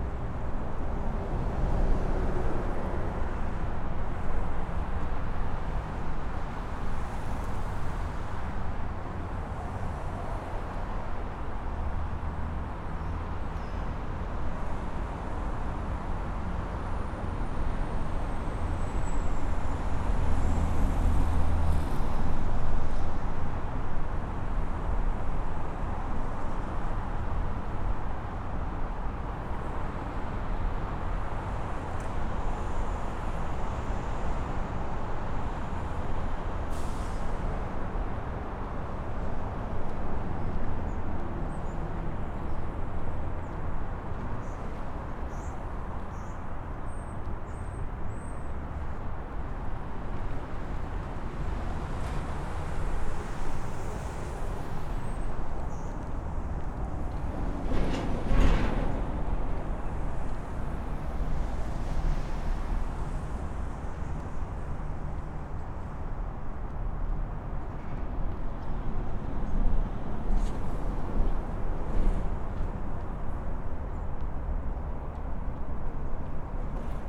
Montreal: Autoroute 40 Spaghetti Junction - Autoroute 40 Spaghetti Junction
equipment used: M-Audio Microtrack Stereo Cardoid Mic
I walked around the overpass, taking note of the traffic, the drops of water from overhead, and the majestic pigeons.